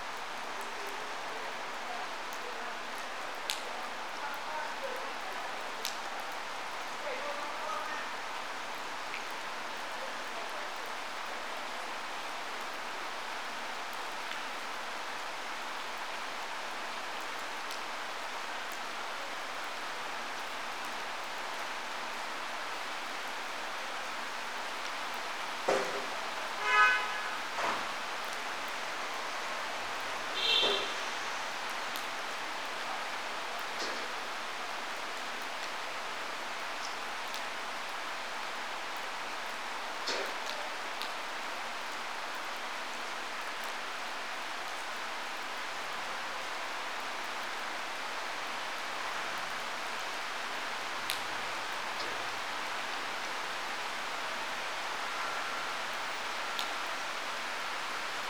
Middlebrook Ave, Staunton, VA, USA - Waiting for a Train
Once a busy station, Staunton is now a whistle stop. Recorded on a somewhat sweltering weekday afternoon about fifteen feet from the tracks and maybe ten times that distance to the Middlebrook Avenue. One can hear the sound of the original station building being renovated for the sake of a new business, traffic on the street, a gentle summer shower and its stillicide dripping from the canopy. In its heyday this was a bustling place. It may bustle again in the future, but for now it is like an eddy somewhat apart from the main stream of life and traffic through downtown, with only a freight train or two each day and six passenger trains each week.